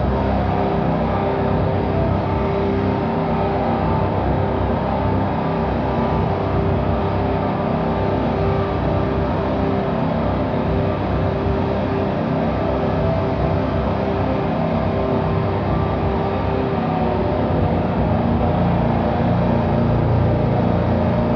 Venedig, Italien - Venice Biennale - Australian Pavillion
At the Venice Biennale 2022 inside the Australian Pavillion - the sound of a a live performed guitar drone. The work DESASTRES is an experimental noise project that synchronises sound with image. The work takes the form of a durational solo performance as installation. Marco Fusinato will be performing during the opening hours of the Biennale – a total of two hundred days. Fusinato will perform live in the Pavilion using an electric guitar as a signal generator into mass amplification to improvise slabs of noise, saturated feedback, and discordant intensities that trigger a deluge of images onto a freestanding floor-to-ceiling LED wall.
international ambiences
soundscapes and art enviroments